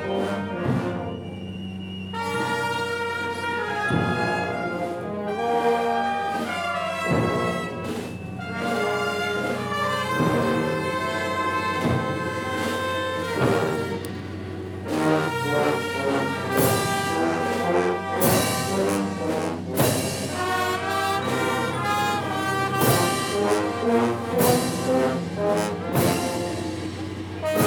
Antigua Guatemala, Guatemala - Procession in Antigua
25 March 2016, 15:00